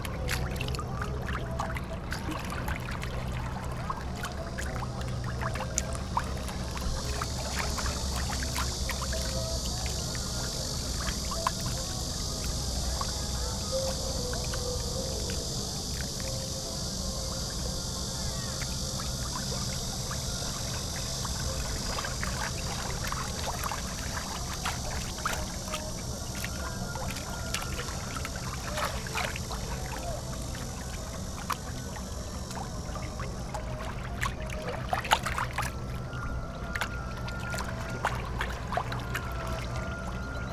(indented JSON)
{
  "title": "冬山河青龍岸, Yilan County - River Sound",
  "date": "2014-07-29 10:20:00",
  "description": "River Sound, Traffic Sound, Opposite the tourist area of sound, Birdsong sound, Cicadas sound, Hot weather\nZoom H6 MS+ Rode NT4",
  "latitude": "24.67",
  "longitude": "121.81",
  "timezone": "Asia/Taipei"
}